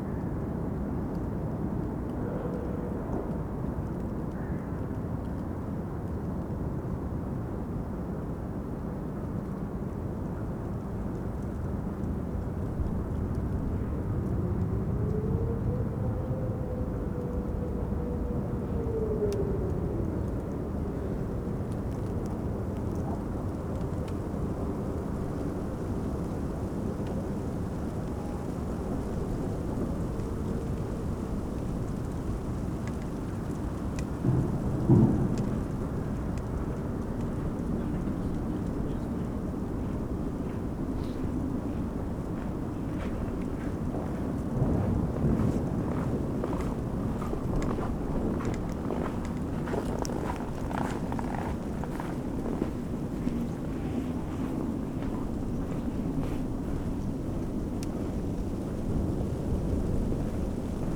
cracking ice of the frozen spree river, some joggers, dry leaves of a tree rustling in the wind, distant drone from the power station klingenberg
the city, the country & me: january 26, 2014